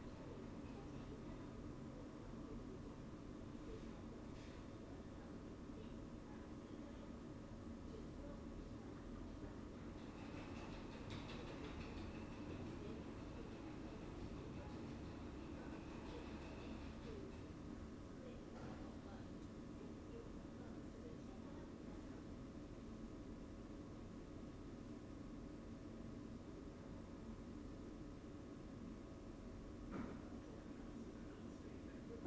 Scarborough, UK - taking the delivery in ...
Taking the delivery in ... recorded with open lavalier mics on mini tripod ...
November 2013